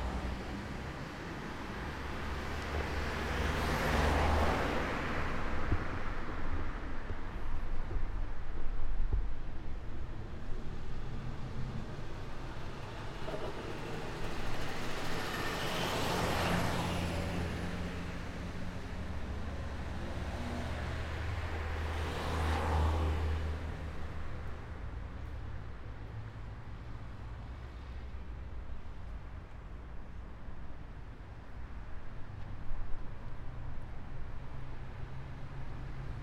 Perugia, Italy - fast traffic in the citycenter